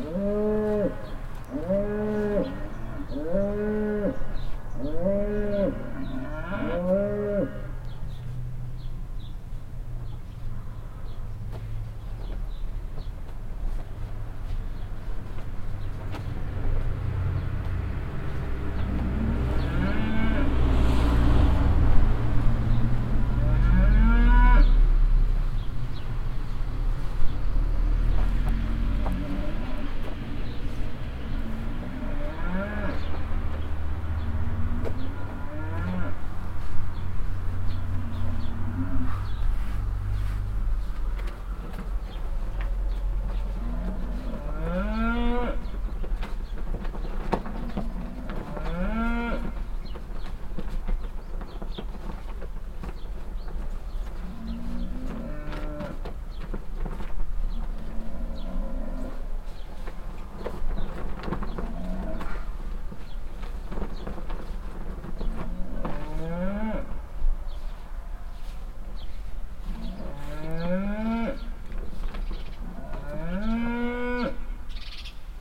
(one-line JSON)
{"title": "heiderscheid, farmstead", "date": "2011-08-08 18:44:00", "description": "At a farmstead nearby a willow.\nA constantly loud mooing cow and moves behind a bush. Other cows answer more silently. Cars passing by in the nearby street, birds chirping and a rooster call.\nHeiderscheid, Bauernhof\nAuf einem Bauernhof neben einer Weide. Eine Kuh muht ständig und laut hinter einen Busch. Andere Kühe antworten etwas leiser. Autos fahren auf der nahe gelegenen Straße vorbei, Vögel zwitschern und ein Hahn kräht.\nHeiderscheid, éolienne\nA côté d’une éolienne, un matin d’été venteux. Le bruit continu d’un générateur à l’intérieur et le bruit du mouvement régulier des pales de l’éolienne. Dans le lointain, on entend le trafic sur la route proche\nProject - Klangraum Our - topographic field recordings, sound objects and social ambiences", "latitude": "49.89", "longitude": "5.98", "altitude": "515", "timezone": "Europe/Luxembourg"}